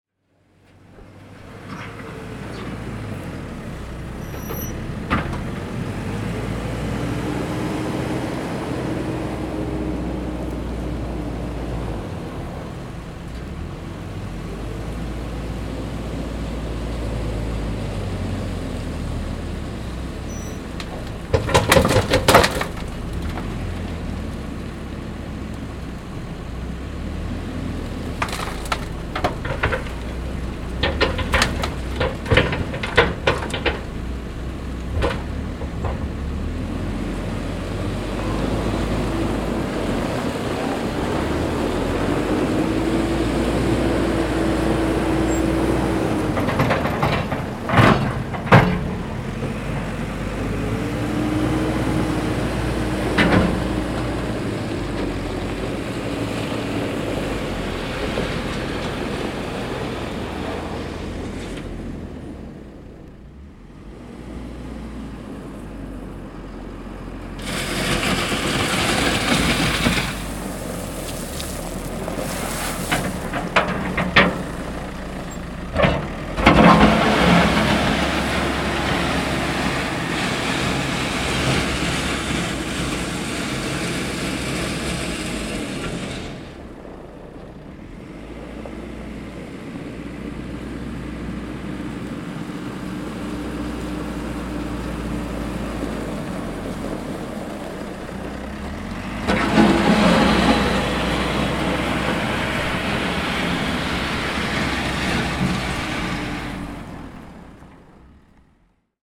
Nørgårdvej, Struer, Danimarca - Bulldozer machine working
Bulldozer machine working on a construction site
30 September 2022, 16:22